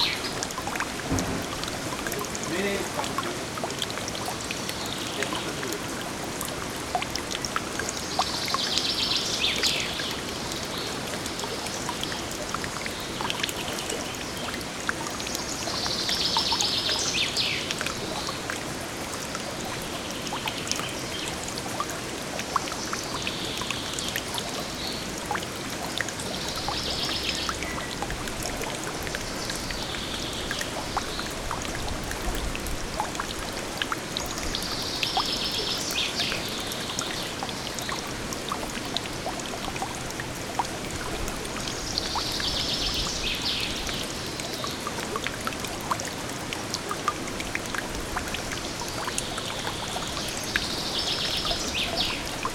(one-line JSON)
{"title": "Wildpark Schloß Tambach, Weitramsdorf, Deutschland - fountain", "date": "2013-06-15 14:13:00", "description": "little fontain, runnel, birds", "latitude": "50.23", "longitude": "10.87", "altitude": "289", "timezone": "Europe/Berlin"}